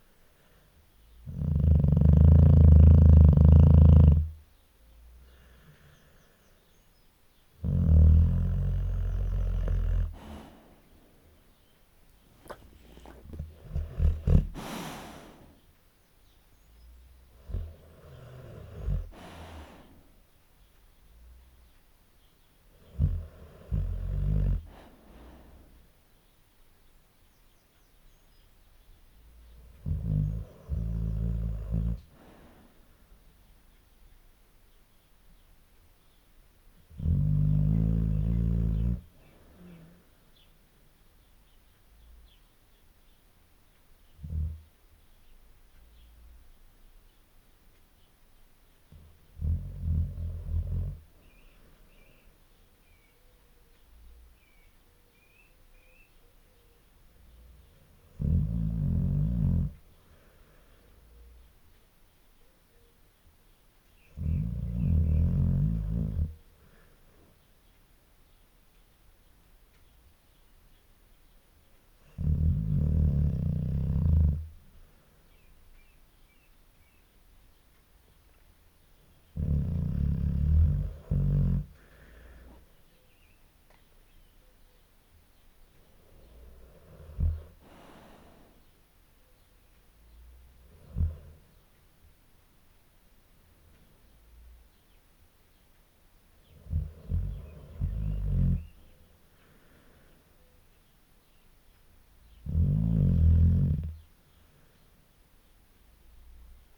Luttons, UK - podge ... the bull mastiff ... sleeping ... snoring ... again ...
Podge ... the bull mastiff ... sleeping ... snoring ... again ... integral LS 14 mics ... she was seriously distressed ... we thought she might not return from the vets ... she's back with her family and none the worse ... rumble on old girl ... sadly Podge passed away ... 2019/12/28 ... an old and gentle lady ...
Malton, UK, 1 July 2019